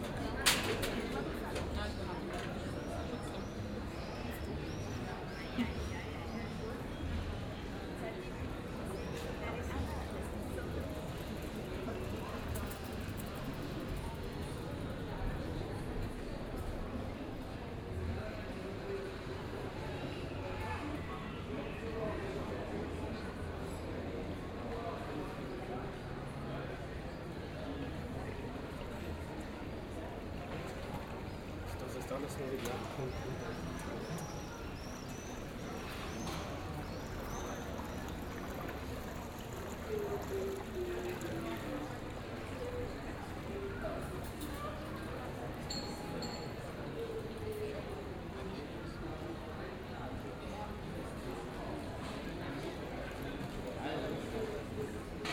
Aarau, Pelzgasse, evening, Schweiz - Pelzgasse1
Continuation of the evening walk through the quiet streets of Aarau, some talks, some water.
Aarau, Switzerland, 28 June